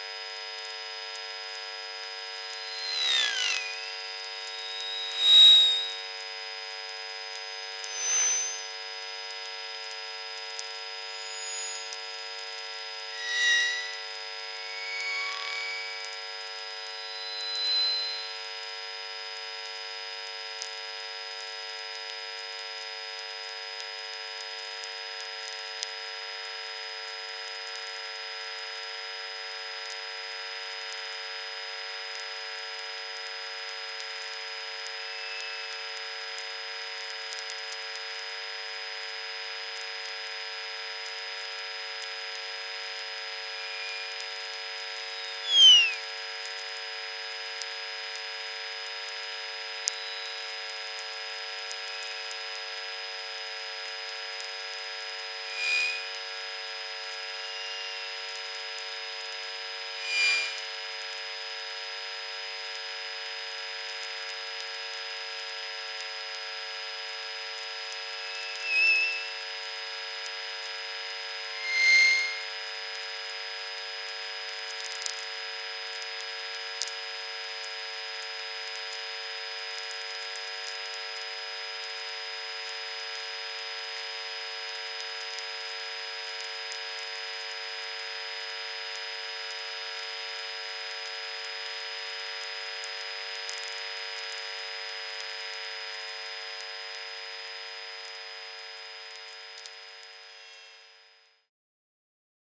Utena, Lithuania, traffic as electromagnetic field
electromagnetic antenna near the street. cars passing by...